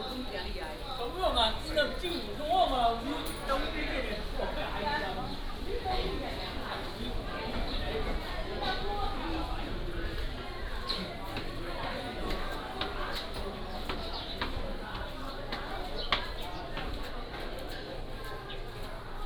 介壽獅子市場, Nangan Township - Walking through the market
Walking through the market, Traffic Sound
福建省, Mainland - Taiwan Border, 15 October